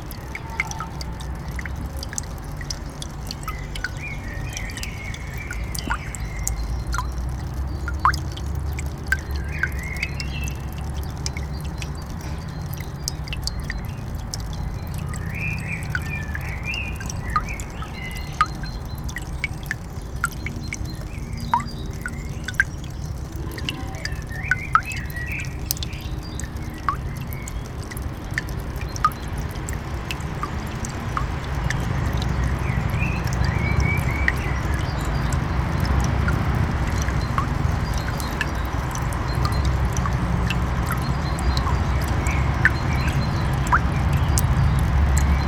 tropfender wasserhahn eines beckens zur befüllung von gießkannen
dropping tap of a small basin to fill watering cans
the city, the country & me: april 19, 2009
Germany, 24 April 2009, ~15:00